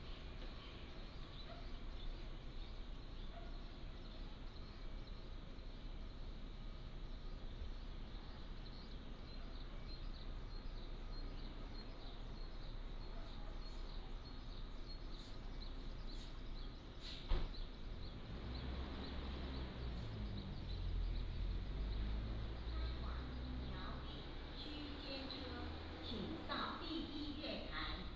Fengfu Station, 後龍鎮校椅里 - At the station platform
Station information broadcast, High-speed train passing through, Footsteps